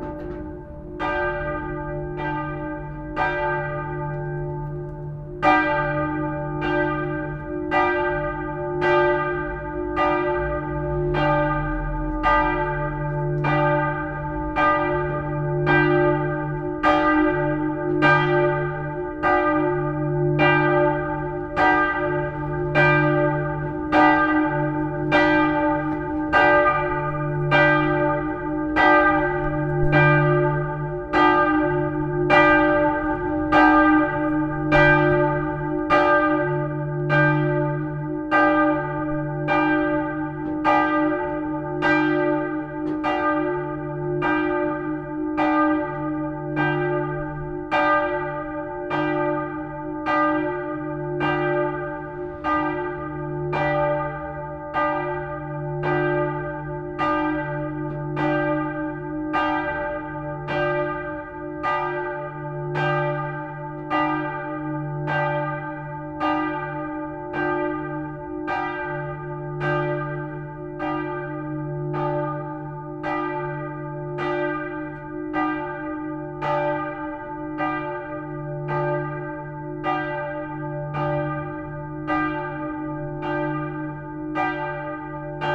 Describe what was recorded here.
La Bouille bell recorded just before eight o'clock. It's a beautiful bell for a small village.